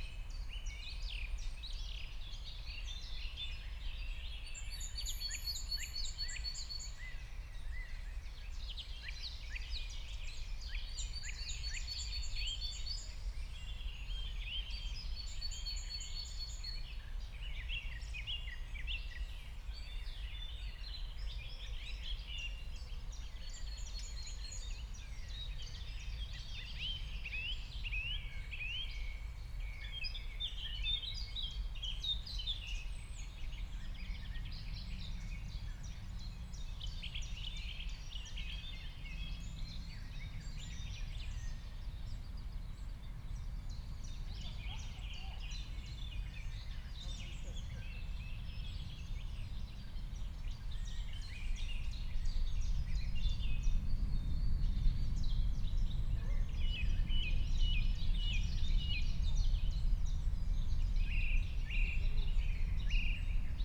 Köln, nature reserve Wahner Heide / Königsforst, very close to the airport, forest and heathland spring ambience, an aircraft descends
(Sony PCM D50, DPA4060)
Köln, Germany, 1 May 2019